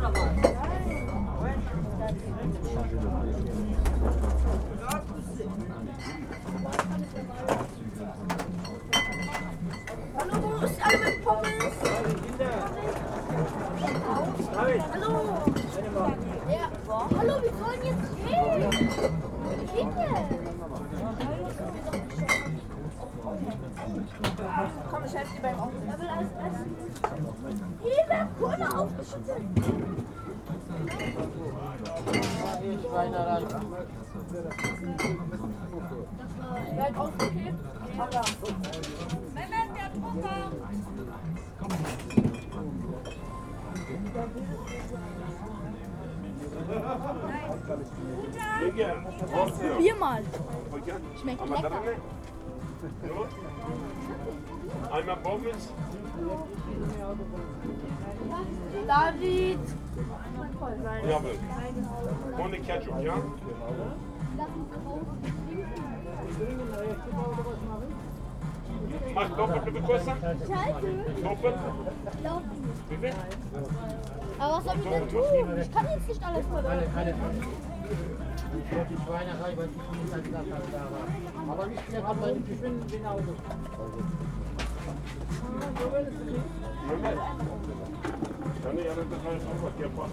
berlin, werbellinstraße: flohmarkt, imbiss - the city, the country & me: flea market, snack stall
woman putting bottles in the fridge, venders and visitors of the flea market
the city, the country & me: april 17, 2011
Berlin, Germany, 17 April